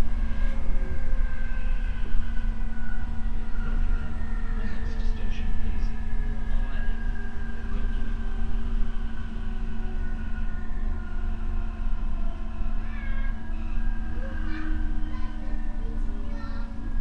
Brookwood Rise, Northside, Dublin, Irlande - in the DART going to Howth
Field recording in the DART, Stop Stations, Going to Howth
Recording Gear : Primo EM172 omni (AB) + Mixpre-6
Headphones required